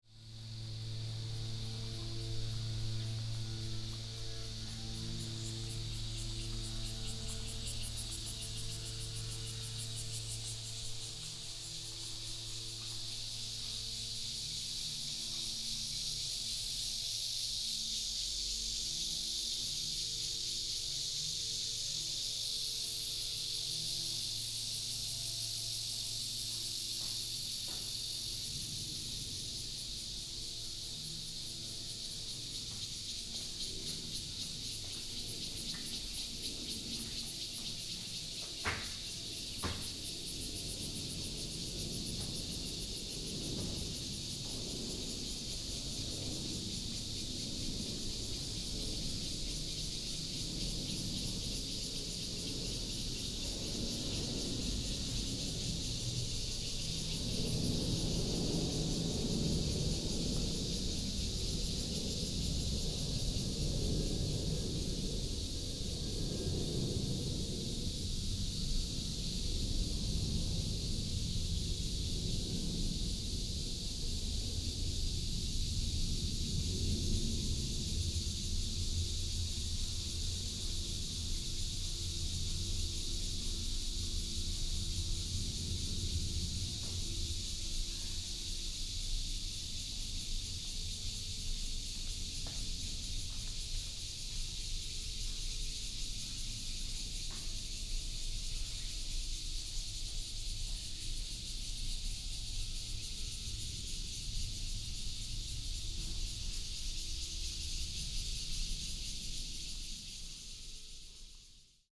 范姜祖堂, Xinwu Dist., Taoyuan City - Walking in the old house
Walking in the old house, traffic sound, Cicada cry, The plane flew through
Xinwu District, Taoyuan City, Taiwan, 26 July 2017